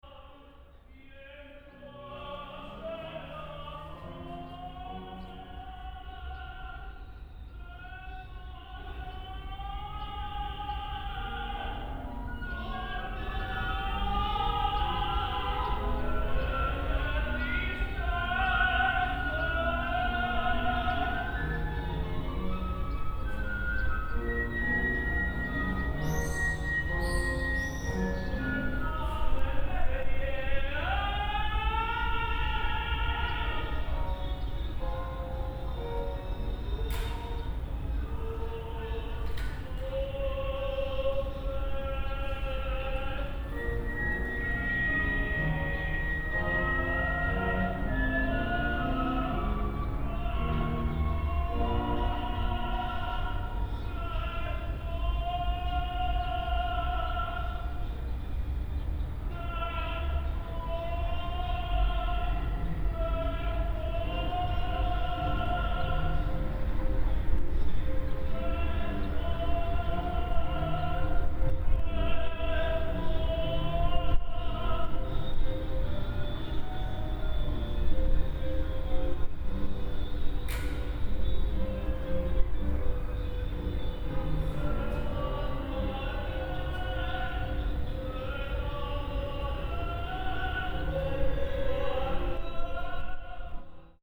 Tirana Conservatory, Albania - Simultaneous rehearsals and sounds from outside
Akademia e Arteve. Simultaneous rehearsals before final exams. Open windows, bird song and radio from outside audible. Sorry for the wind distorting! Binaural recording.
July 2009